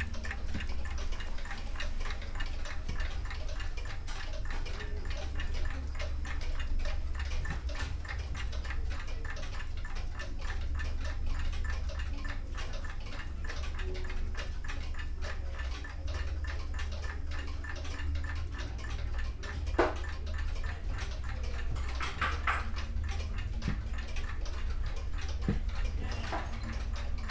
clockmaker, gosposka ulica, maribor - measuring time
working space of the clock master Jože Vidovič, old clocks
28 March 2014, 09:17